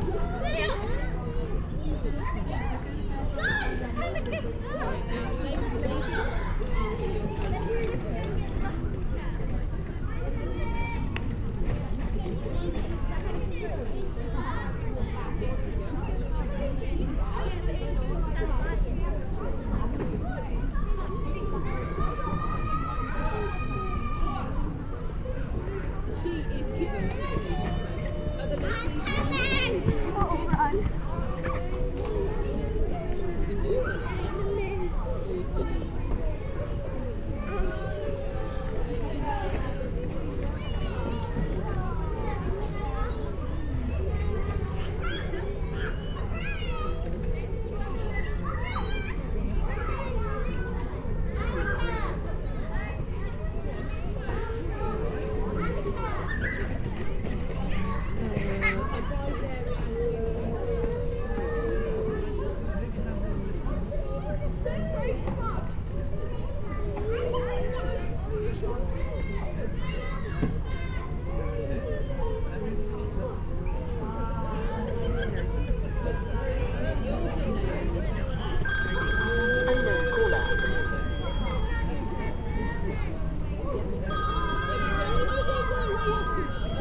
Playground, Priory Park, Malvern, UK
A sunny day in a busy town centre play area.